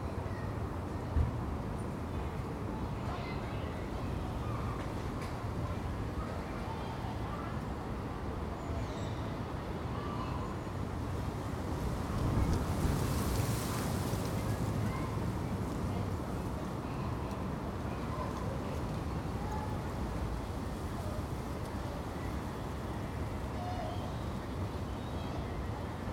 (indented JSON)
{
  "title": "Contención Island Day 21 inner northwest - Walking to the sounds of Contención Island Day 21 Monday January 25th",
  "date": "2021-01-25 10:33:00",
  "description": "The Poplars High Street Causey Street Gordon Avenue Hawthorn Road Linden Road\nStand in the grounds of All Saints Church\nIt is playtime at the nearby school\nAn insect hotel\nsix Jackdaws\none black-headed and two herring gulls\none tit\nA few people pass\nIt is windier than on previous days\nthough still cold",
  "latitude": "55.00",
  "longitude": "-1.63",
  "altitude": "73",
  "timezone": "Europe/London"
}